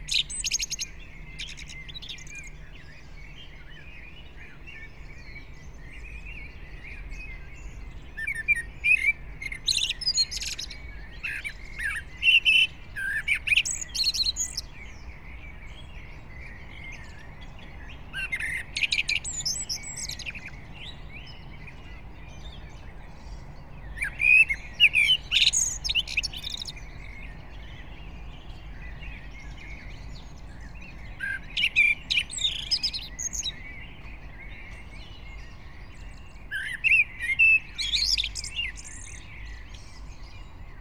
Brno, Lužánky - park ambience
04:30 Brno, Lužánky
(remote microphone: AOM5024/ IQAudio/ RasPi2)